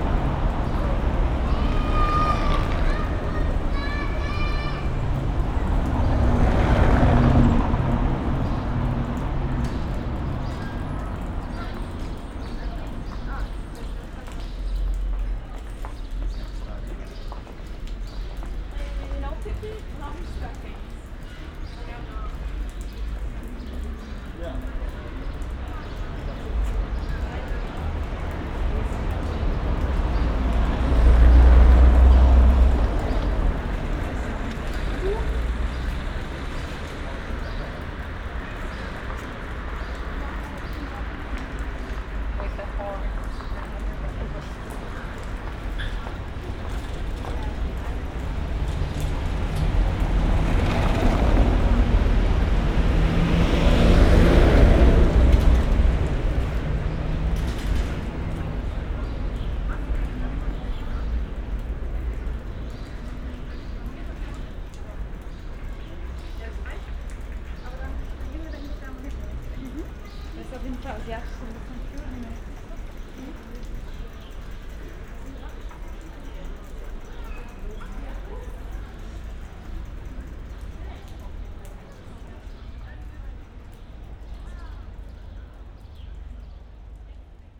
cars, cyclists, tourists
the city, the country & me: july 7, 2013